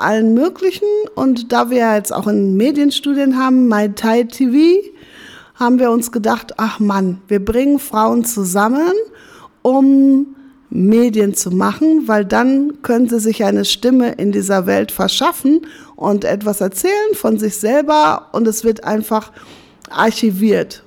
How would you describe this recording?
we are at the office of AfricanTide… Marie and Joy talk about the value of active media work for women. How can we gain the know-how. By doing it of course, and learning from each other… the recording was produced during media training for women in a series of events at African Tide during the annual celebration of International Women’s Day.